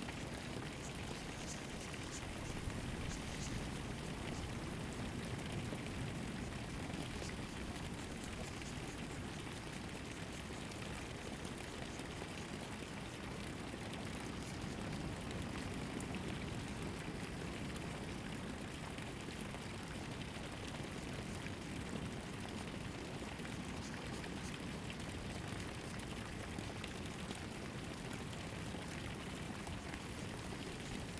信義里, Chenggong Township - In the farmland
In the farmland, The sound of water, Very hot weather
Zoom H2n MS+ XY
Taitung County, Taiwan, 6 September 2014, ~14:00